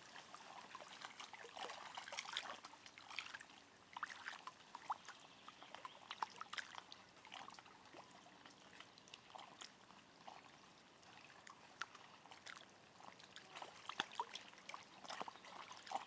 Lithuania, lake Tauragnas, waves
Waves on lake Tauragnas
Utenos apskritis, Lietuva, 5 June 2022